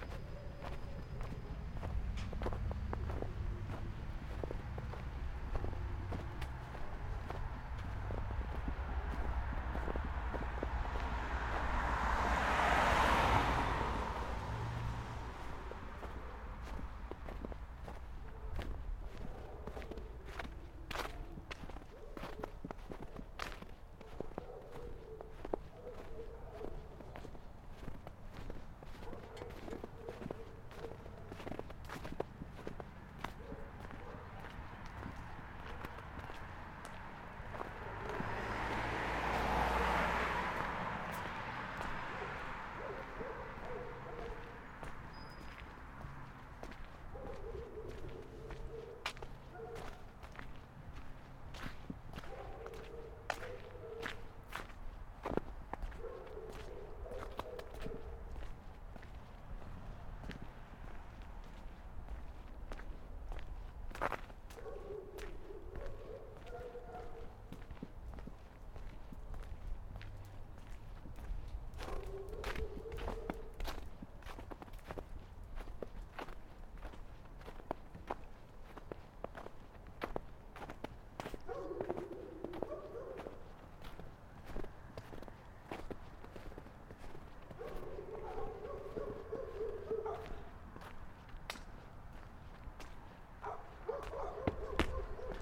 Dubois St, Newburgh, NY, USA - Night Walk on Dubois St
Evening stroll after a snow storm. Zoom F1 w/ XYH-6 stereo mic
New York, United States